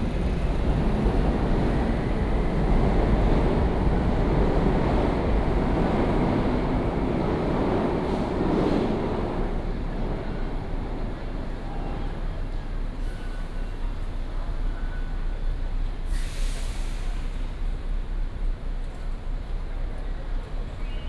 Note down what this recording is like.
Walk along the track, To the direction of the MRT station